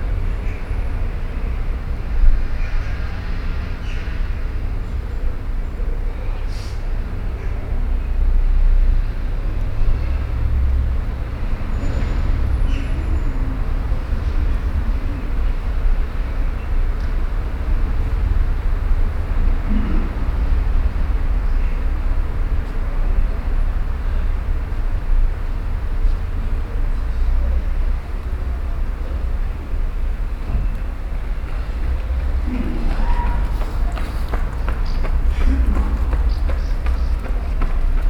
Cahors, Eglise Saint-Barthélémy.